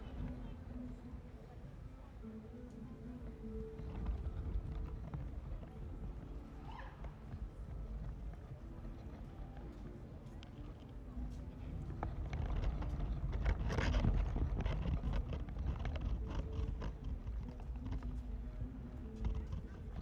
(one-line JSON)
{"title": "Parallel sonic worlds: Birchtrees & Tate Modern riverside, Bankside, London, UK - Parallel sonic worlds: Birchtrees & Tate Modern riverside", "date": "2022-05-16 13:44:00", "description": "The bright green birch leaves looked beautiful as they shimmered in the wind on this sunny day. The sound is quiet but easy to hear. The movement also creates a vibration in the wood of the tree. This track uses a combination of normal and contact mics to crossfade from the outside atmosphere, where a distant guitarist entertains in front of the Tate Gallery, to the internal fluttering as picked up by a contact mic on the tree itself. When the wind drops the vibration in the wood disappears too.", "latitude": "51.51", "longitude": "-0.10", "altitude": "3", "timezone": "Europe/London"}